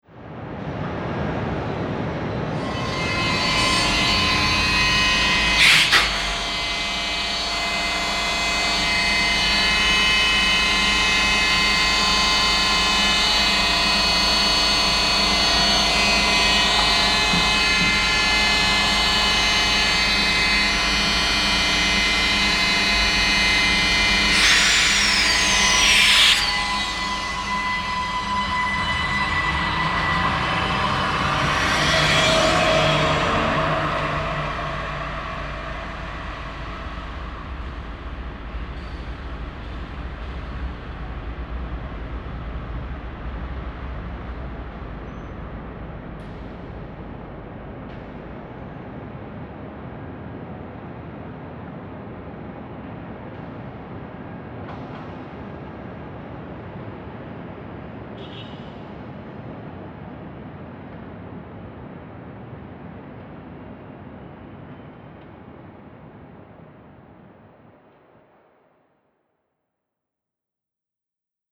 Margarethenhöhe, Essen, Deutschland - essen, laubenweg, tram station
An der Strassenbahnhaltestelle Laubenweg - Der Klang der Ankunft einer Bahn, ein kurzer Halt, die Weiterfahrt. Der Klang verschwindet im allgemeinen Rauschen des Stadtverkehrs.
At a tram station - the arrival of the tram, a short stop and the departure fading into the city traffic sound.
Projekt - Stadtklang//: Hörorte - topographic field recordings and social ambiences